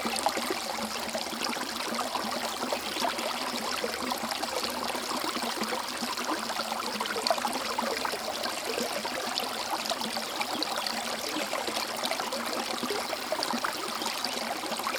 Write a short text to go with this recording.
Rjecina river, Rijeka, Croatia. rec setup: X/Y Sennheiser mics via Marantz professional solid state recorder PMD660 @ 48000KHz, 16Bit